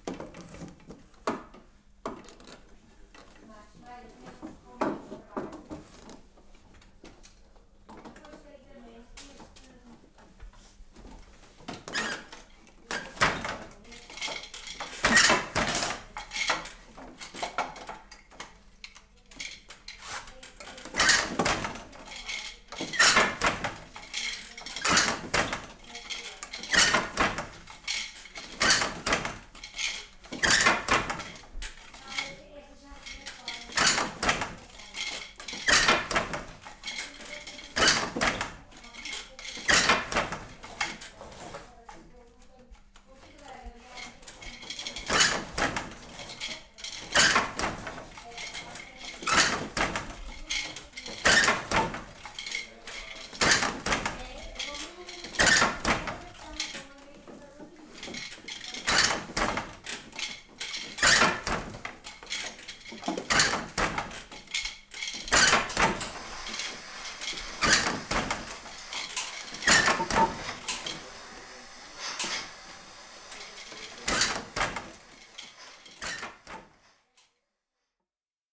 Campo Bemfeito, Portugal - As Capuchinhas, tear
Tear das Capuchinas em Campo Bemfeito, Castro dAire, Portugal. Mapa Sonoro do Rio Douro. Working loom in Castro dAire, Portugal. Douro River Sound Map.
20 July 2011